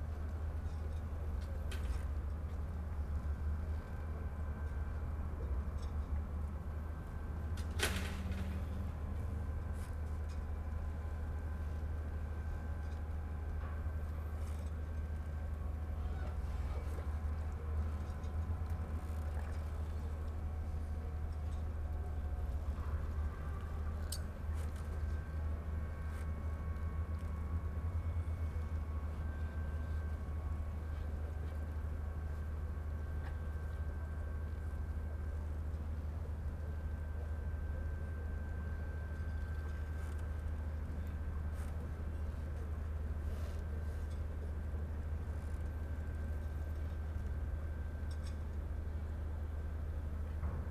Ponta delgada, Azores-Portugal, marina sounds
Ponta Delgada, Azores, marina, waves, water, boats, creaking sounds
30 October